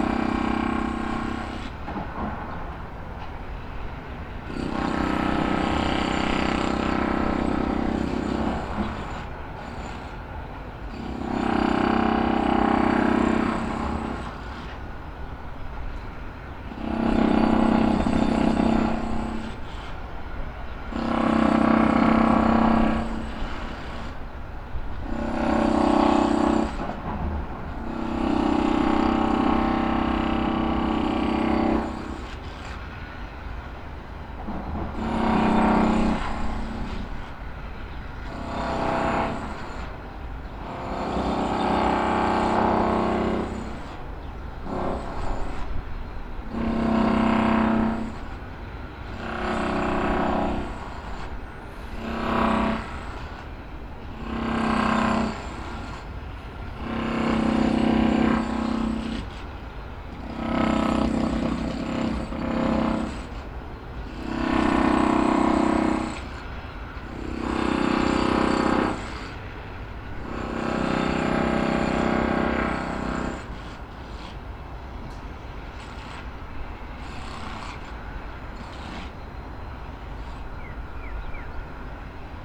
Poznan, balcony - drill tides
surge of drill rattle coming from a house across the field. sounds of rubble being tossed into a container.